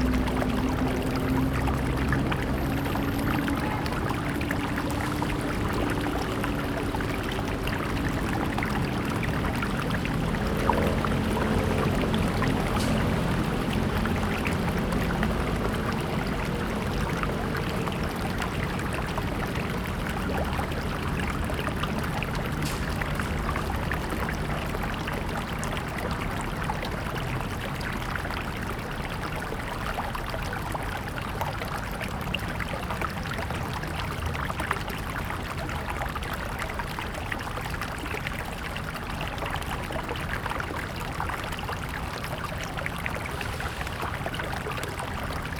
梅川, West Dist., Taichung City - Stream and Traffic Sound
Stream sound, Traffic Sound, Binaural recordings, Zoom H2n MS+XY
Taichung City, Taiwan, 2 December, ~14:00